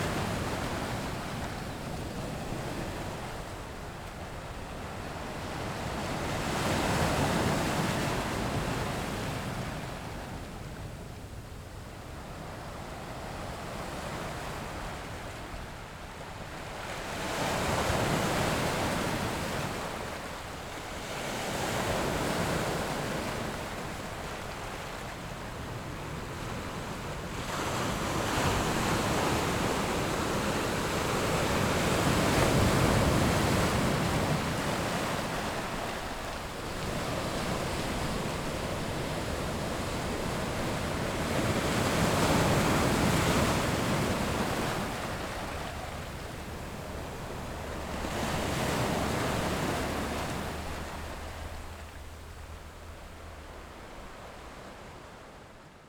{"title": "富岡港, Taitung City - nearby fishing port", "date": "2014-09-06 09:11:00", "description": "Sound of the waves, At the seaside, In the nearby fishing port, The yacht's whistle, Fighter flying through\nZoom H6 XY +Rode NT4", "latitude": "22.79", "longitude": "121.19", "altitude": "4", "timezone": "Asia/Taipei"}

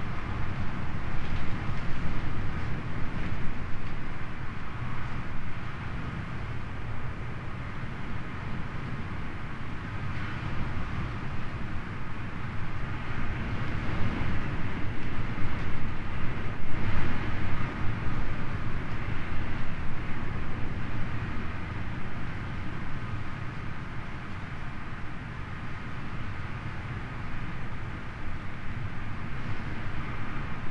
{"title": "Fishermans Bothy, Isle of Mull, UK - Raging storm from inside bothy on Kilfinichen Bay", "date": "2019-11-11 05:24:00", "description": "I awoke to the wonderful sound of a storm raging outside the bothy I was staying in, with the dying embers from the wood burning stove to keep warm it was a delight to listen to. Sony M10 boundary array.", "latitude": "56.38", "longitude": "-6.06", "altitude": "7", "timezone": "Europe/London"}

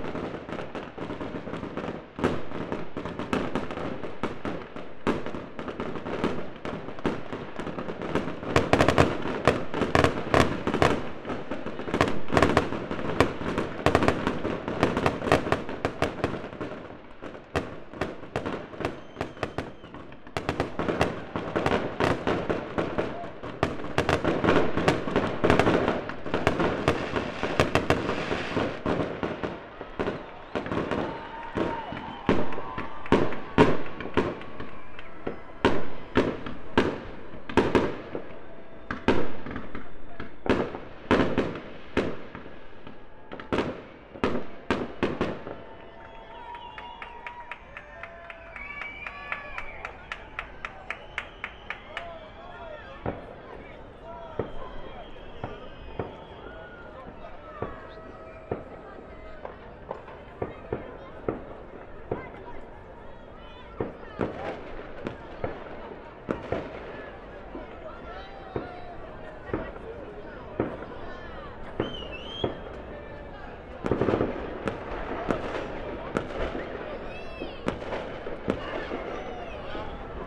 {"title": "Fireworks - 2019 - Av. Eugene Levy 50-52-54, 2705-304 Colares, Portugal - New year 2019 - fireworks", "date": "2018-12-31 23:59:00", "description": "Fireworks announcing the new year 2019, launched from the beach (Praia da Maças). Recorded with a SD mixpre6 and a AT BP4025 XY stereo mic.", "latitude": "38.83", "longitude": "-9.47", "altitude": "19", "timezone": "Europe/Lisbon"}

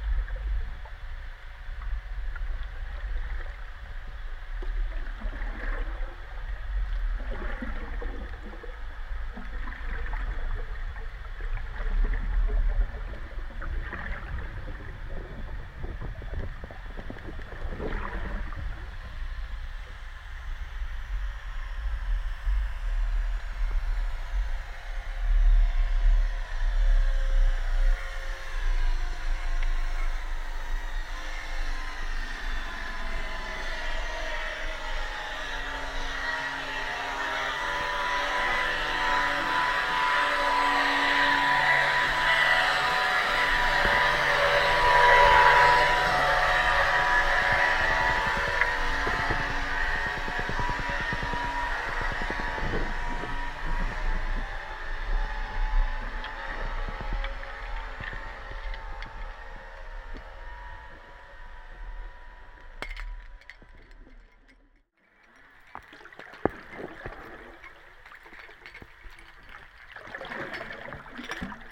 {"title": "Ricardo St, Niagara-on-the-Lake, ON, Canada - Hydrophone in Lower Niagara River", "date": "2020-09-26 11:30:00", "description": "This recording was made with a hydrophone and H2n recorder on the Lower Niagara River, from a canoe not far from the shore off Navy Hall. The river is over a half-kilometer wide at that point and the depth of the river in the recording area probably about twelve meters, the hydrophone being lowered at varying depths. Powered leisure and recreational fishing boats are heard, their swells and the tinkling of a steel canoe anchor dragging over the rock bottom that did not work well in the river’s strong current. The Niagara River’s health has much improved in recent decades over it’s heavily degraded condition and its many fish species are safe to eat to varying degrees.", "latitude": "43.25", "longitude": "-79.06", "altitude": "73", "timezone": "America/New_York"}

